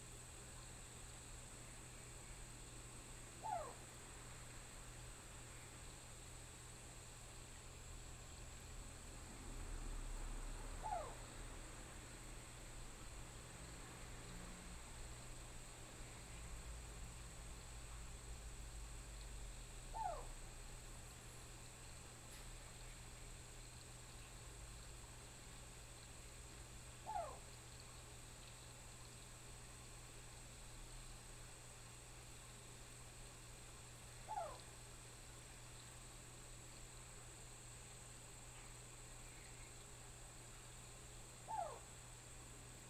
綠屋民宿, 桃米里 Puli Township - In Bed and Breakfasts
In Bed and Breakfasts
Puli Township, Nantou County, Taiwan